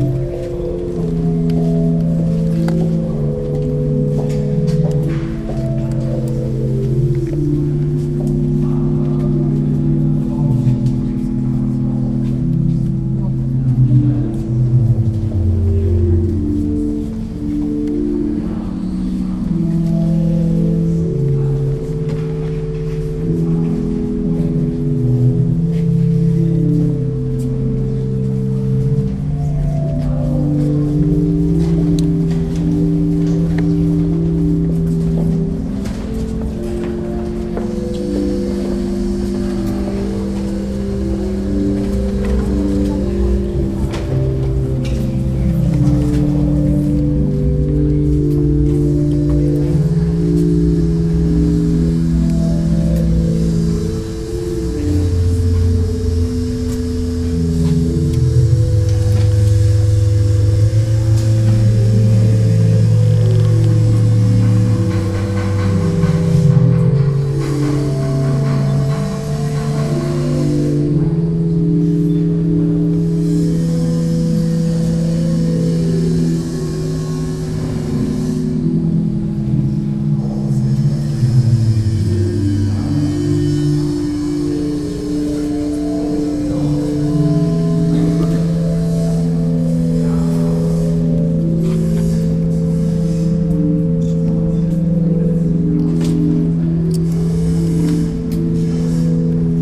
Organ in the Gedächtniskirche
Organ of the new Kaiser-Wilhelm-Gedächtniskirche with construction workers working on the old part of the Kaiser-Wilhelm-Gedächtniskirche in the background.